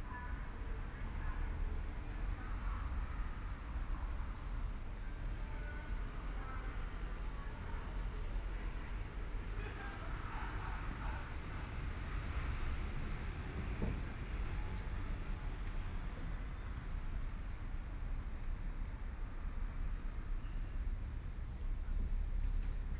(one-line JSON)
{"title": "Kaifeng St., Taitung City - abandoned railroad tracks", "date": "2014-01-16 17:40:00", "description": "Walking on abandoned railroad tracks, Currently pedestrian trails, Dogs barking, Bicycle Sound, People walking, Binaural recordings, Zoom H4n+ Soundman OKM II ( SoundMap2014016 -23)", "latitude": "22.76", "longitude": "121.14", "timezone": "Asia/Taipei"}